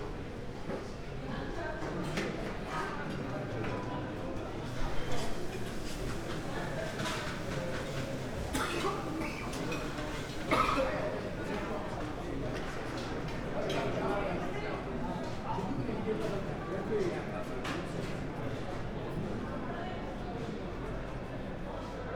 {"title": "Bremen, Hauptbahnhof, main station - station walk", "date": "2018-05-01 20:30:00", "description": "walking through Hauptbahnhof Bremen\n(Sony PCM D50, Primo EM172)", "latitude": "53.08", "longitude": "8.81", "altitude": "6", "timezone": "Europe/Berlin"}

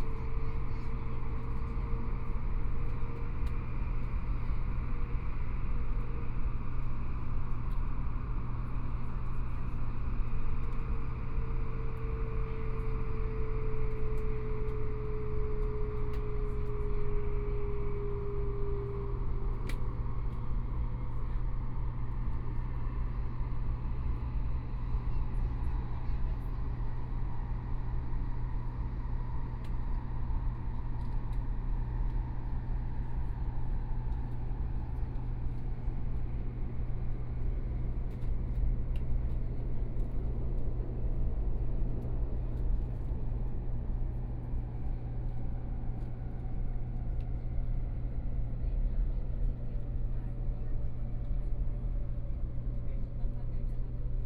{"title": "Banqiao District, New Taipei City - Taiwan High Speed Rail", "date": "2014-01-30 18:50:00", "description": "Taiwan High Speed Rail, from Taipei Station to Banqiao Station, Messages broadcast station, Zoom H4n+ Soundman OKM II", "latitude": "25.03", "longitude": "121.48", "timezone": "Asia/Taipei"}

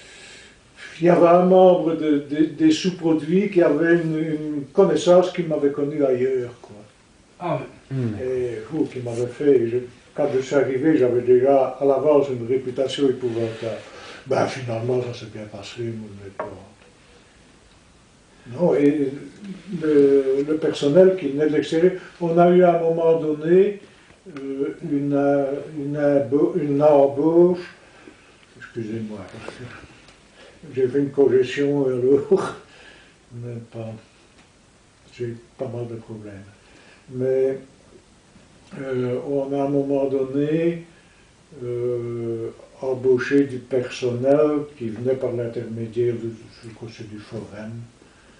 {"title": "Anderlues, Belgique - The coke plant - Alain Debrichy", "date": "2009-03-07 12:00:00", "description": "Alain Debrichy\nAn old worker testimony on the old furnaces of the Anderlues coke plant. We asked the workers to come back to this devastated factory, and they gave us their remembrances about the hard work in this place.\nRecorded at his home, because he was extremely tired. I placed his testimony exacly where was his work place.\nRecorded with Patrice Nizet, Geoffrey Ferroni, Nicau Elias, Carlo Di Calogero, Gilles Durvaux, Cedric De Keyser.", "latitude": "50.42", "longitude": "4.27", "altitude": "167", "timezone": "Europe/Brussels"}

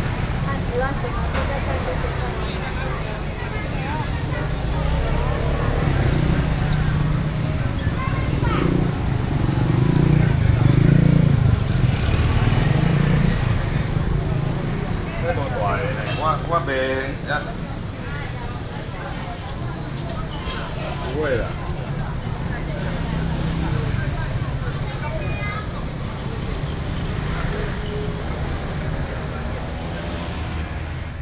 {"title": "Ln., Sec., Bao’an St., Shulin Dist. - Walking in the traditional market", "date": "2012-06-20 10:30:00", "description": "Walking through the traditional market, Traffic Sound\nBinaural recordings, Sony PCM D50", "latitude": "24.99", "longitude": "121.43", "altitude": "16", "timezone": "Asia/Taipei"}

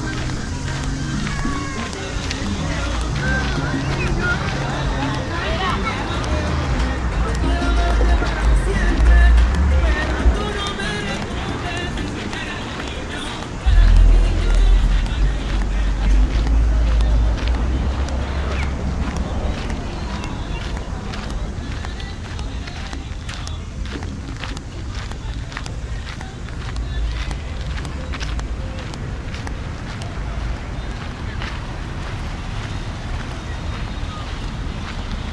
{"title": "Palmer Square, Chicago, IL, USA", "date": "2008-10-23 00:54:00", "description": "walking around Palmer square in Chicago, IL", "latitude": "41.92", "longitude": "-87.71", "altitude": "182", "timezone": "Europe/Berlin"}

